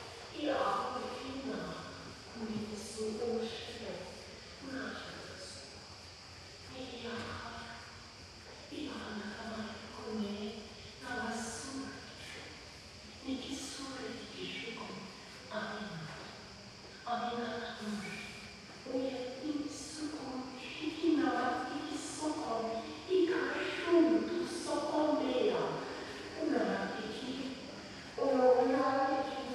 Venedig, Italien - Venice Biennale - Israelian Pavillion
At the Venice Biennale 2022 inside the Israelian Pavillion - the sound of the sound installation "The Queendome" by Ilit Azoulay. The artist casts off the restrictions of national and male representations and opens pathways into an interconnected Middle East. The Queendom, reigned by art, seems to have risen out of a total system crash. It is a rhizomatic realm, where stories coalesce.
international ambiences
soundscapes and art enviroments
Veneto, Italia, July 2022